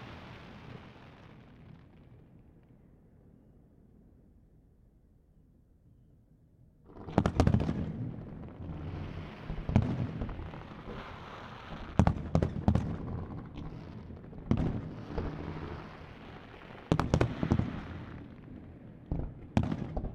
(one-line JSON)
{"title": "Brest - Feu d'artifice 14 juillet 2014", "date": "2014-06-14 00:01:00", "latitude": "48.38", "longitude": "-4.48", "altitude": "8", "timezone": "Europe/Paris"}